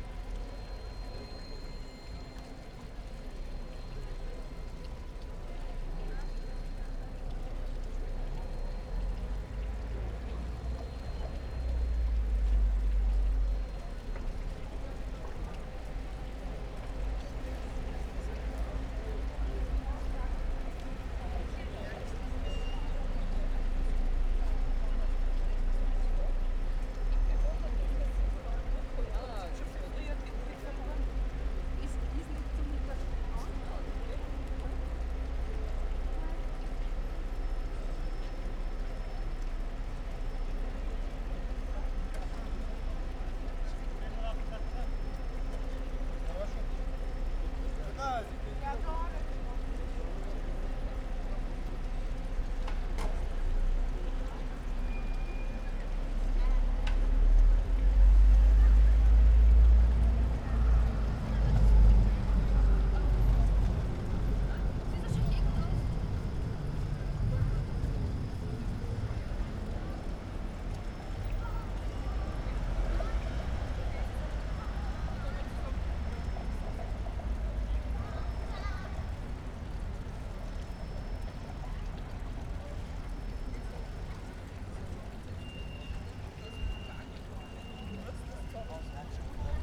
Linz, Taubenmarkt - street ambience /w sound installation
street ambience at Taubenmarkt Linz, trams, cars, people passing, a fountain, a sound installation
(Sony PCM D50, Primo EM172)